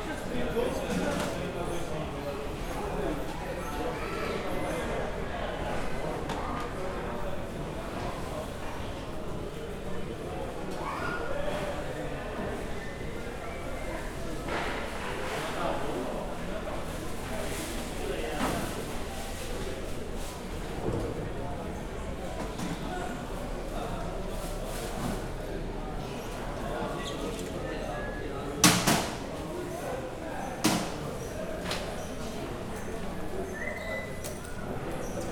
Ponta delgada, Azores-Portugal, market ambiance
ponta_delgada, vegetable market, people, random sounds
30 October 2010